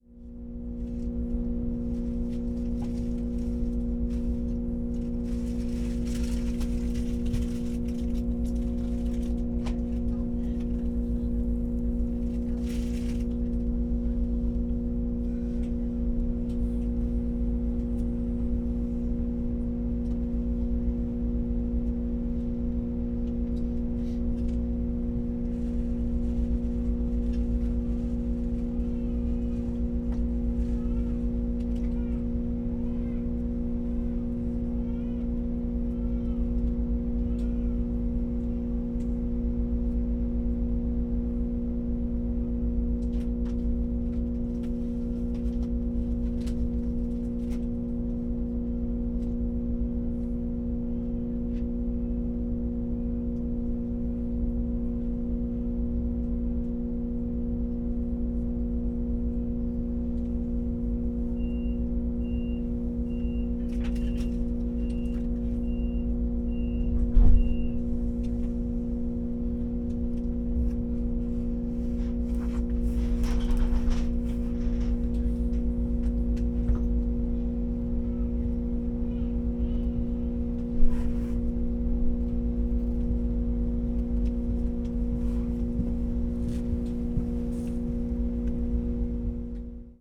Hamm main station, the ICE train splits here. intense drone in cabin during train stop.
(tech: sony pcm d50, audio technica AT8022)